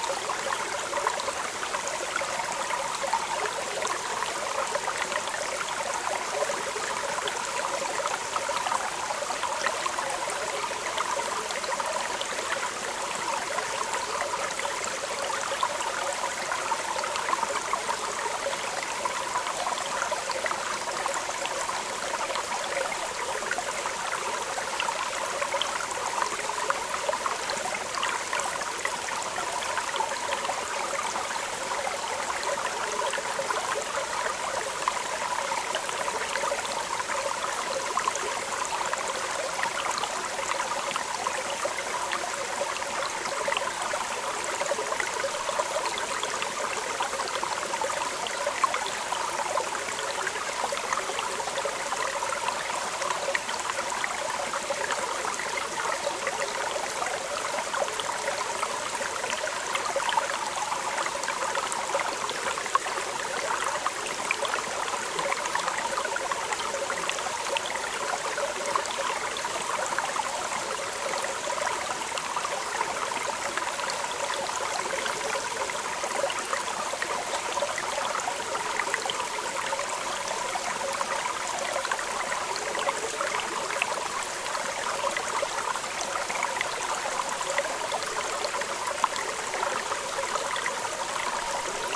Mt Greylock, MA, USA - Trickling Stream
On a short hike around the Mt Greylock Summit, I stopped at a little brook and made this recording, using my trusty Olympus LS-10S